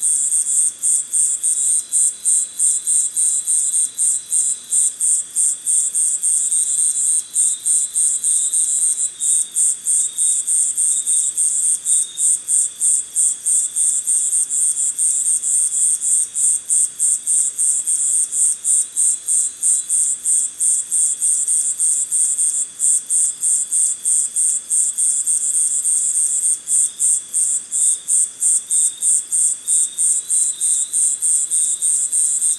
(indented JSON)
{
  "title": "Iracambi - nocturnal conversations",
  "date": "2017-01-28 20:35:00",
  "description": "recorded at Iracambi, an NGO dedicated to preserve and grow the Atlantic Forest",
  "latitude": "-20.93",
  "longitude": "-42.54",
  "altitude": "814",
  "timezone": "America/Sao_Paulo"
}